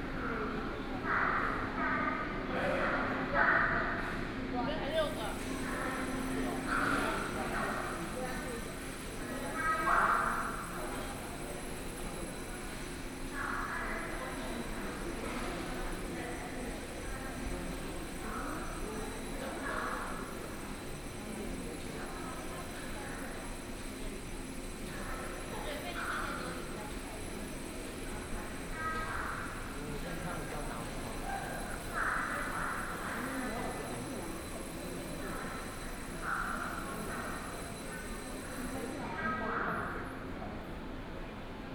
Qidu Station, Keelung City - waiting for the train
Sitting on the station platform waiting for the train, Station broadcast messages, More and more students appear, Binaural recordings, Sony PCM D50+ Soundman OKM II